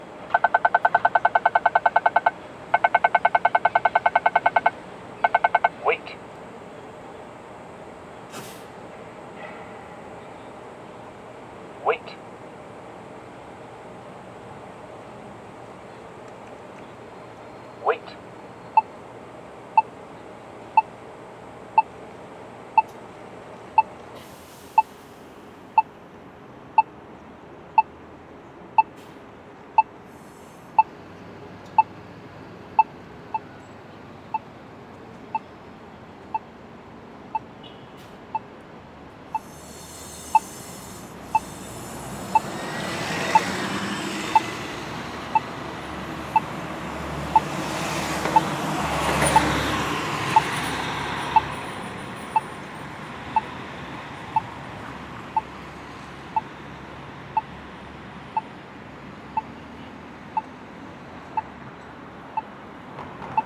Ave, New York, NY, USA - Wait, audible crosswalk signal
An audible crosswalk signal, NYC.